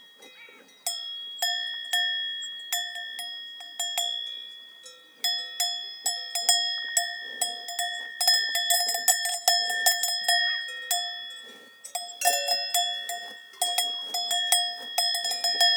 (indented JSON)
{
  "title": "tondatei.de: kuhglocke und mampfen auf dem petite ballon, vogesen",
  "date": "2010-06-06 11:45:00",
  "description": "kuhglocke, gras, berg",
  "latitude": "47.98",
  "longitude": "7.13",
  "timezone": "Europe/Berlin"
}